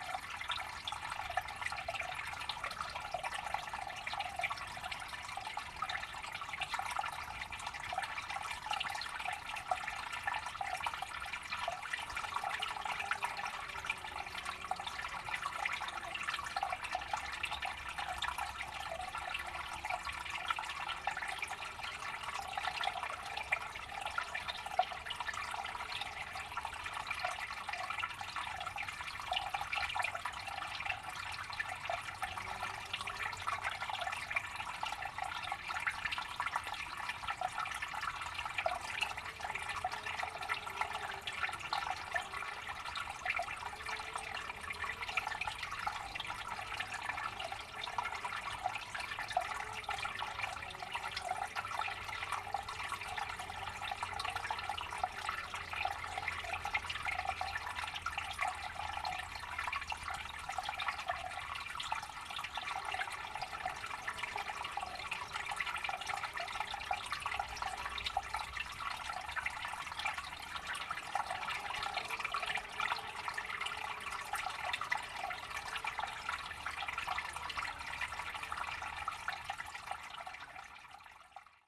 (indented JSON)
{
  "title": "Lihuania, Kirkliai, streamlet",
  "date": "2011-12-16 13:55:00",
  "description": "small brooklet and lumbermen in the distance",
  "latitude": "55.57",
  "longitude": "25.67",
  "altitude": "148",
  "timezone": "Europe/Vilnius"
}